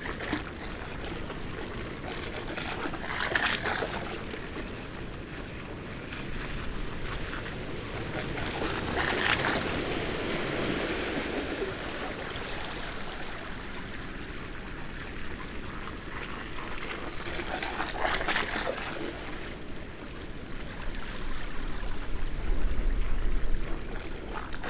Amble Pier, Morpeth, UK - pattering waves ... up ..? and back ..?
Amble Pier ... pattering waves ... a structure under the pier separates a lagoon from the main stream of water ... incoming waves produce this skipping effect by lapping the metal stancheons ... two fishing boats disrupt the pattern ... then it returns ... recorded using a parabolic reflector ... just fascinated by this ...
29 September, 06:30